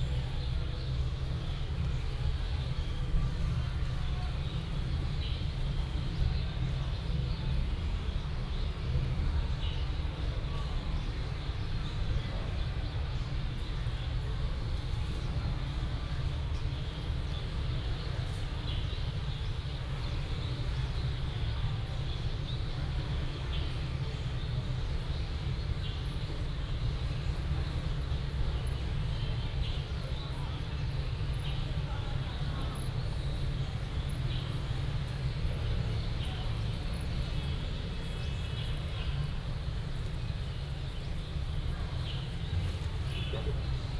福德祠, Fengyuan District - In front of the temple
In front of the temple, Bird calls, Market cries
Taichung City, Taiwan, 22 January 2017